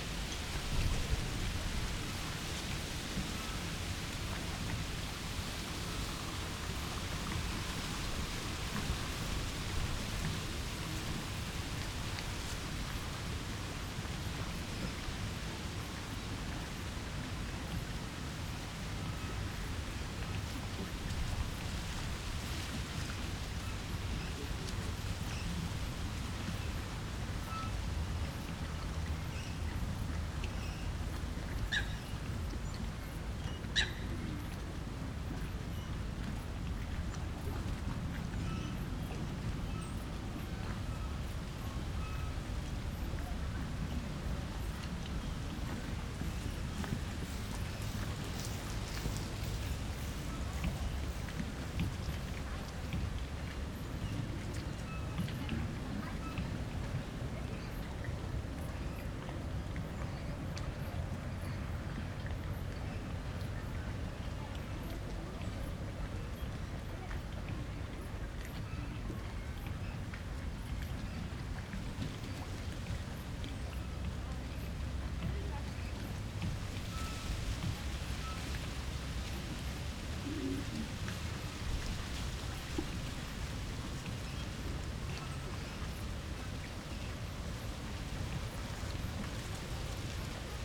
Gränertstraße, Kirchmöser, Brandenburg an der Havel - lake side evening ambience
Kirchmöser Dorf, evening ambience at the lake, nothing much happens, wind in riggings, voices from nearby restaurant, cyclists, pedestrians, kids
(Sony PCM D50, Primo EM172)